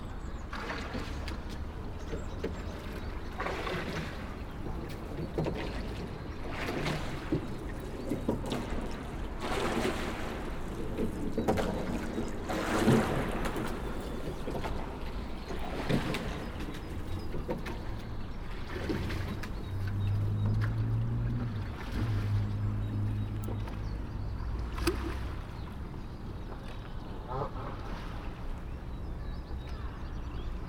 Taplow. Bridge over the Thames. - Taplow. Bridge over the Thames
Rowers on the River Thames passing under the railway bridge (The brick bridge was designed by Isambard Kingdom Brunel and is commonly referred to as 'The Sounding Arch' due to its' distinctive echo).